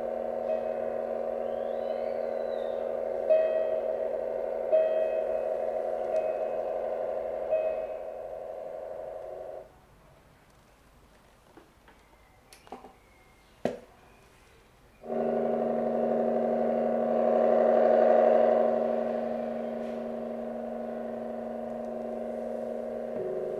kasinsky CAVALI3 improvvisazione per chitarra elettrica, voce di bimbo, cane, moto